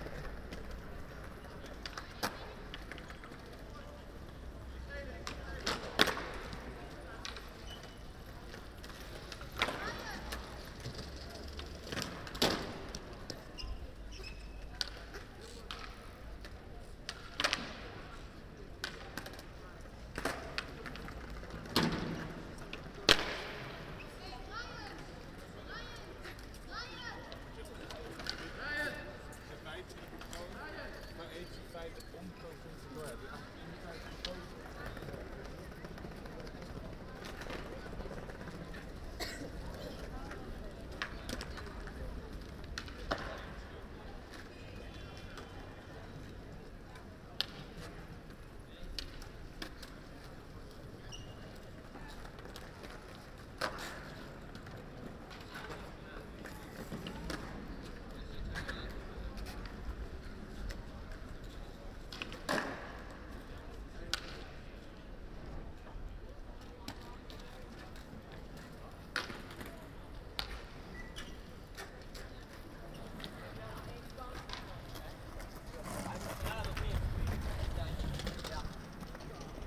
Five or six kids skateboarding.